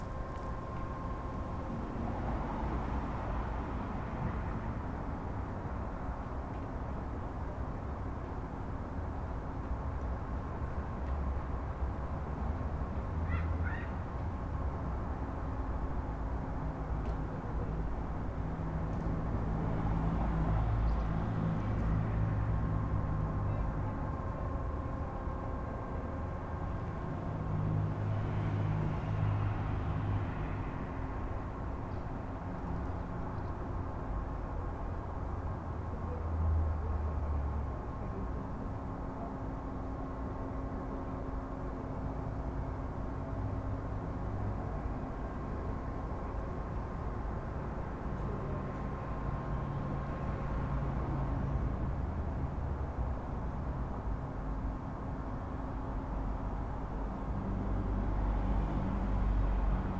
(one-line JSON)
{"title": "Wilsonova, Maribor, Slovenia - corners for one minute", "date": "2012-08-20 19:13:00", "description": "one minute for this corner: Wilsonova 13", "latitude": "46.56", "longitude": "15.66", "altitude": "263", "timezone": "Europe/Ljubljana"}